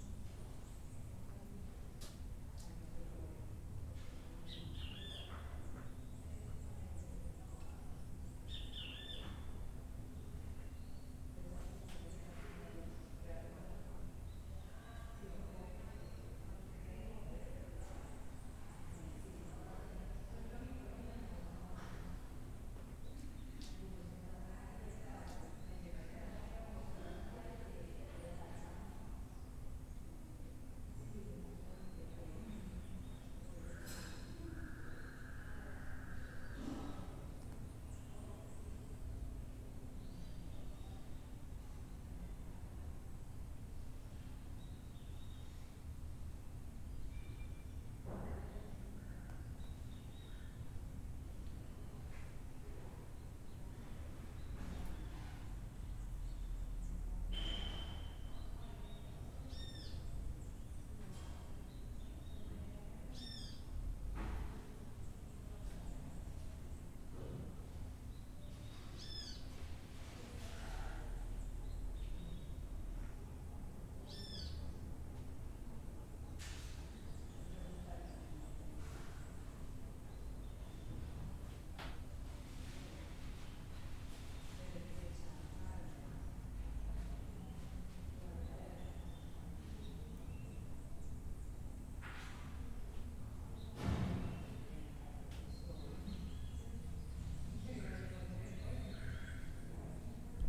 Cl., Medellín, Antioquia, Colombia - Ambiente CPTV
Ambiente grabado en el centro de producción de televisión de la Universidad de Medellín, durante el rodaje del cortometraje Aviones de Papel.
Sonido tónico: voces, pájaros cantando.
Señal sonora: objetos moviéndose.
Equipo: Luis Miguel Cartagena Blandón, María Alejandra Flórez Espinosa, Maria Alejandra Giraldo Pareja, Santiago Madera Villegas, Mariantonia Mejía Restrepo.
4 October, 08:21